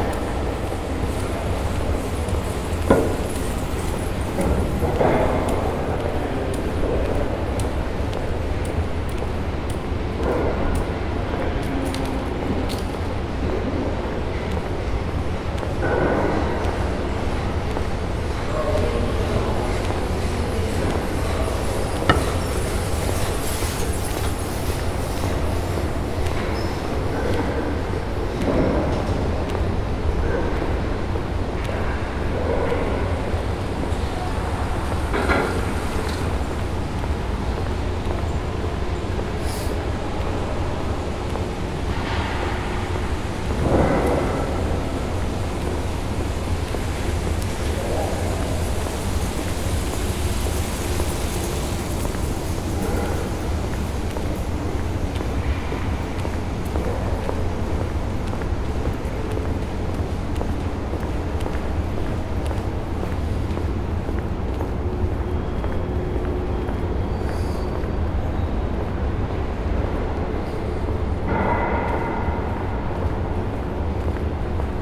recorded and created by Benjamin Vinck with a Tascam recorder
Antwerpen, Belgium, 17 May 2018, 18:19